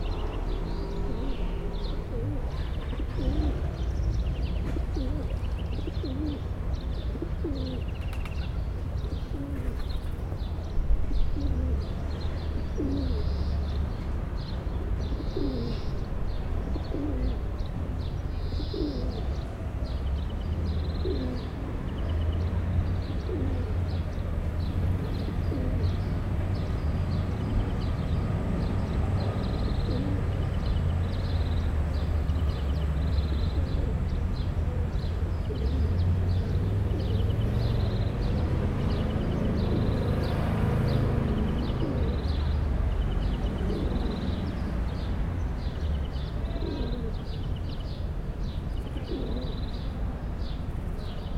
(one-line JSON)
{"title": "Pl. Georges Clemenceau, Aix-les-Bains, France - Le triangle des pigeons", "date": "2022-07-09 11:45:00", "description": "Trois cèdres délimitent un triangle refuge pour les pigeons, un verdier et quelques moineaux complètent l'avifaune, dans les véhicules de passage on distingue le bruit de moteur caractéristique de la voiturette sans permis fabriquée à Aix, l'Aixam.", "latitude": "45.69", "longitude": "5.91", "altitude": "249", "timezone": "Europe/Paris"}